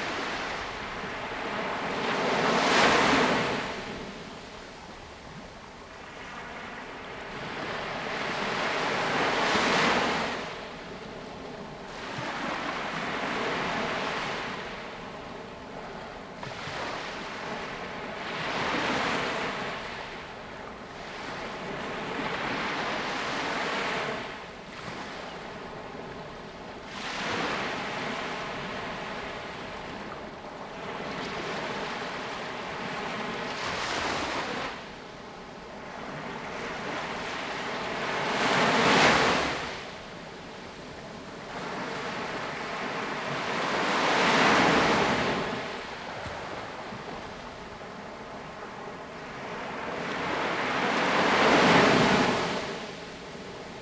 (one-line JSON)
{"title": "六塊厝, Tamsui Dist., New Taipei City - the waves", "date": "2016-04-16 07:16:00", "description": "Aircraft flying through, Sound of the waves\nZoom H2n MS+XY", "latitude": "25.24", "longitude": "121.45", "altitude": "5", "timezone": "Asia/Taipei"}